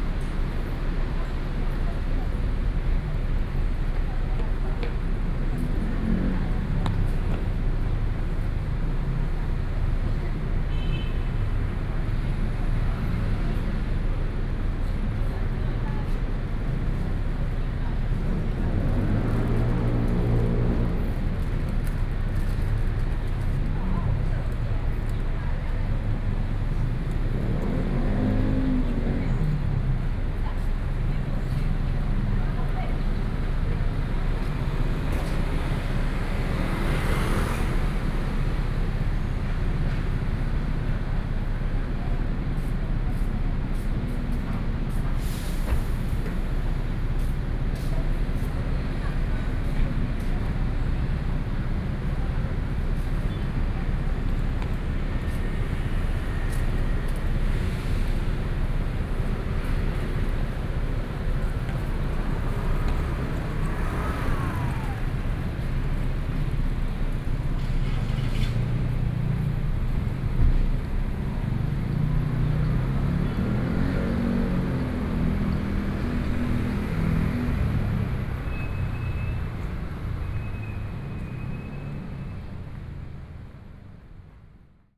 Taoyuan, Taiwan - Place the morning
Square in front of the station, Sony PCM D50 + Soundman OKM II
Taoyuan City, Taoyuan County, Taiwan, 11 September 2013